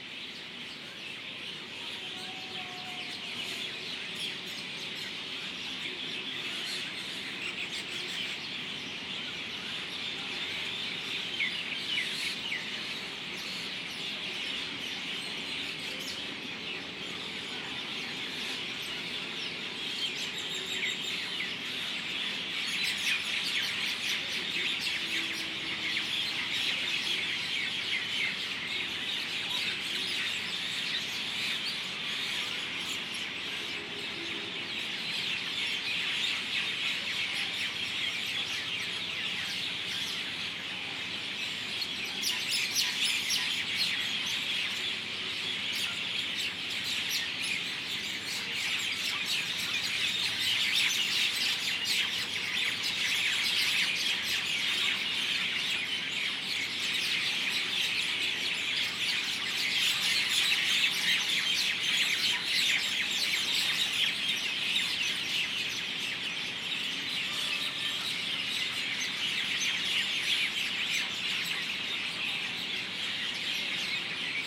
B, West End Colony, Block D, Moti Bagh, New Delhi, Delhi, India - 04 Common Myna everyday board meeting
Evening hordes of birds, finding its place on trees.
Zoom H2n + Soundman OKM
12 January